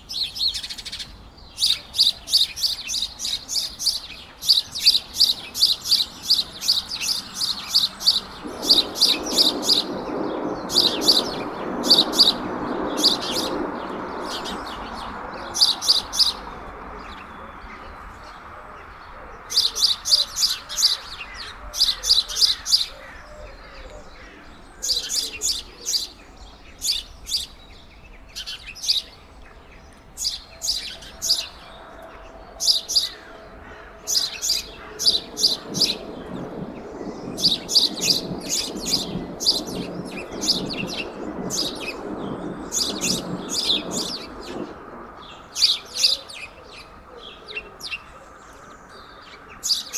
Mont-Saint-Guibert, Belgique - Noisy sparrows
On the morning, noisy sparrows are playing on a tree, a train is passing and very far, the sound of the bells ringing angelus.
15 March 2016, ~07:00